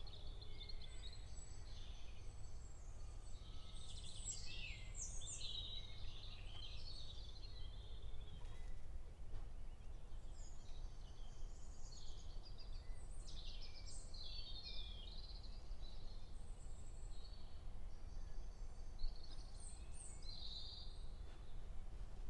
Rue des Agneaux, Orgerus, France - Bird singing - end of the day - third week of spring
France métropolitaine, France, 6 April 2022